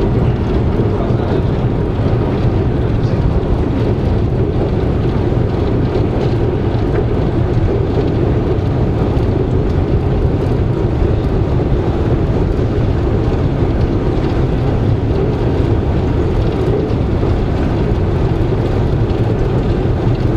[IIIV+tdr] - Vukov Spomenik, stanica: hol